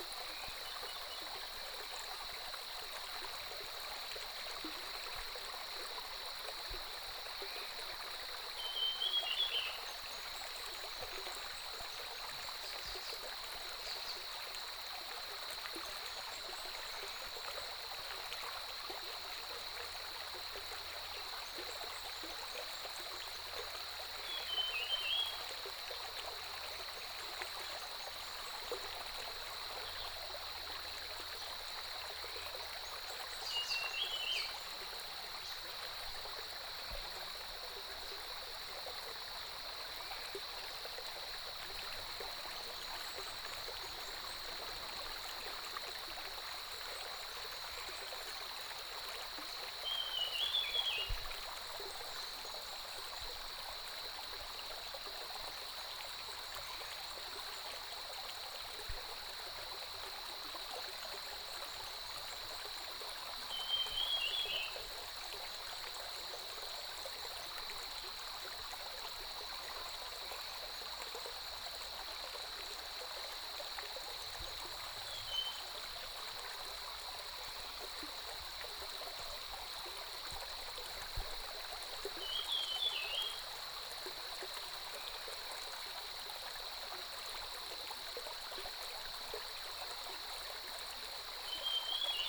中路坑溪, 埔里鎮桃米里 - Stream and Birdsong
Birdsong, Stream, Cicadas cry, Early morning
Puli Township, Nantou County, Taiwan, June 2015